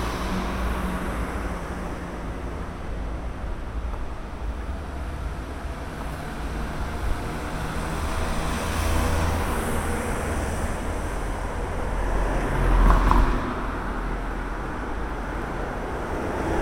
Fiorello la Guardia, Rijeka, traffic lights
Traffic sounds..and traffic light with acoustic signal.